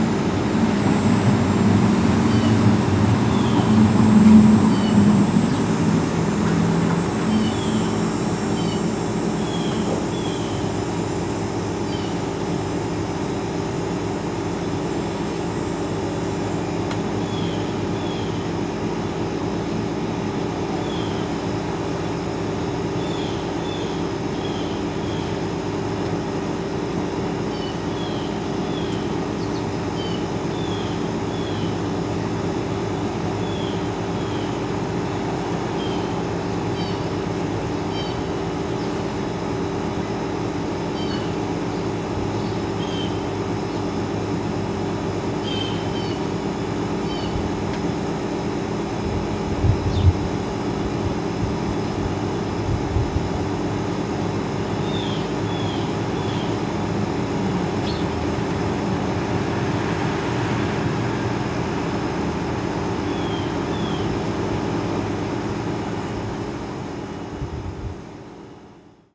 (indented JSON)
{
  "title": "Woodbine Ave, East York, ON, Canada - Blue Jays and a/c.",
  "date": "2018-07-29 08:30:00",
  "description": "Early on a Sunday morning. Blue jay calls with an unfortunate amount of noise from a nearby air conditioning unit.",
  "latitude": "43.69",
  "longitude": "-79.31",
  "altitude": "132",
  "timezone": "America/Toronto"
}